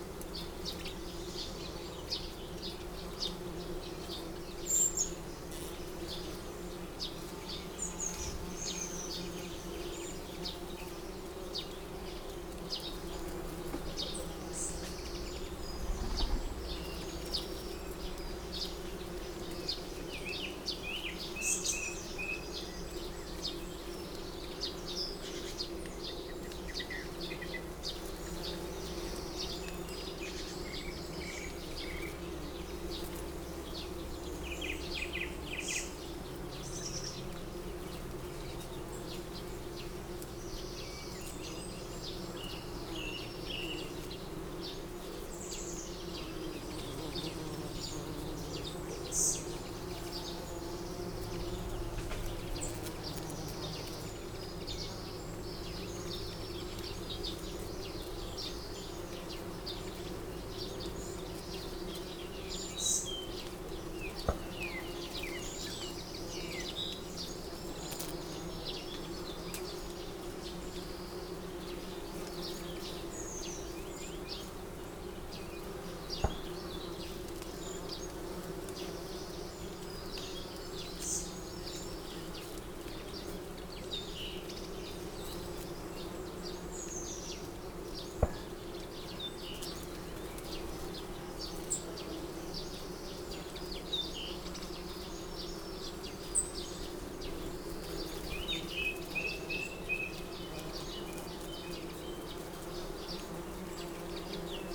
Green Ln, Malton, UK - lime tree buzzing ...
Lime tree buzzing ... bees ... wasps ... hoverflies ... etc ... visiting blossom on the tree ... open lavaliers on T bar on telescopic landing net handle ... bird song and calls from ... goldfinch ... chaffinch ... chiffchaff ... wood pigeon ... song thrush ... wren ... blackbird ... tree sparrow ... great tit ... linnet ... pheasant ... some background noise ...